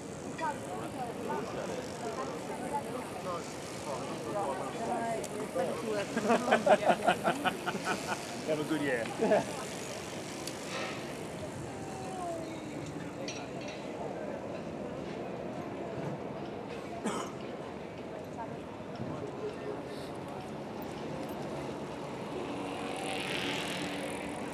Barcelona - Sota el Banc
Under the Bench